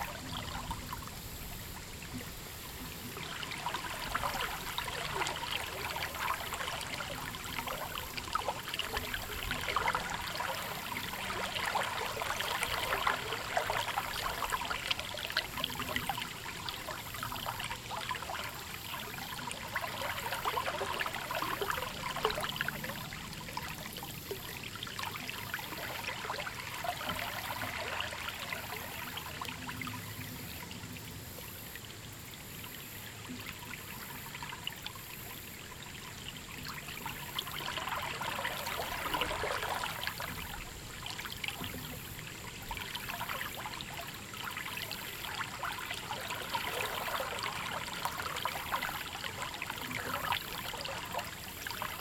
Sounds of turbulent water flowing through a tree branch as wind gusts alternately lift it and blow it back into the river channel.